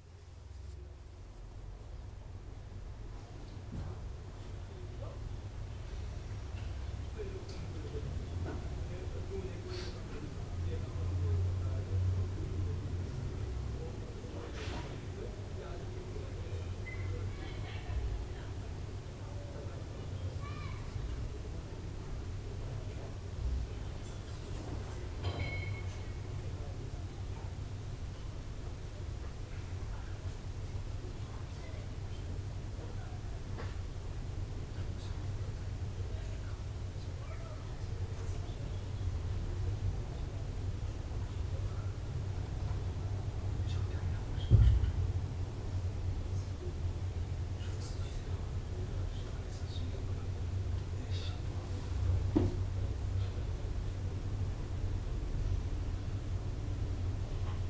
Rue de la Boulangerie, Saint-Denis, France - Médiatheque Centre Ville - 1st Floor Societe et Civilisation
A quiet library space for the 'Societe et Civilisation' section (recorded using the internal microphones of a Tascam DR-40)
25 May